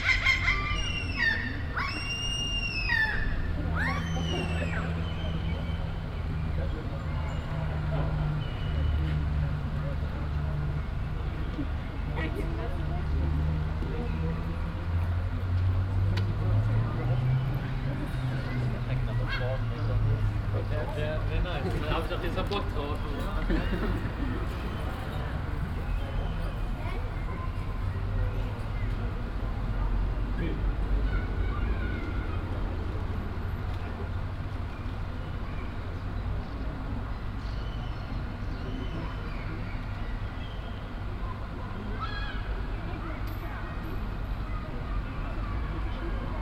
Quiet sunday in Kiel around noon. Gulls always looking for a snack to steal from people in a near cafe, pedestrians, some traffic, distant 1:45 PM chimes of the town hall clock. Sony PCM-A10 recorder with Soundman OKM II Klassik microphone and furry windjammer.